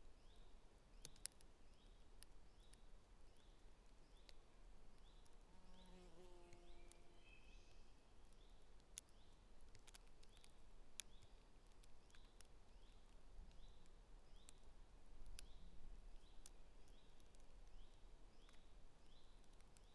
Piramida, Maribor - a long black snail, drooling his lonely way
through dry leaves of the forest ...
Vzhodna Slovenija, Slovenija, 6 June 2013, 19:11